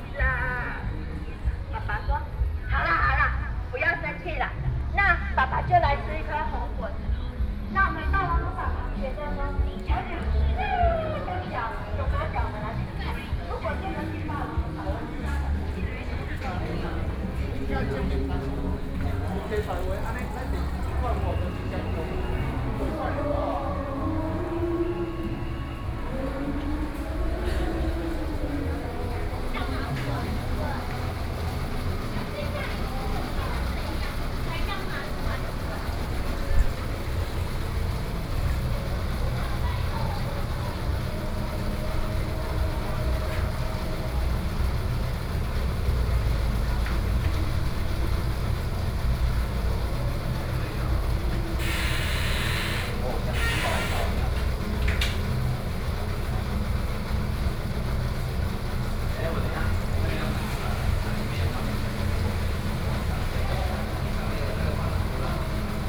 Xingdong Rd., Luodong Township - walking on the Road
walking on the Road, Traffic Sound, Various shops voices, Walking towards the park direction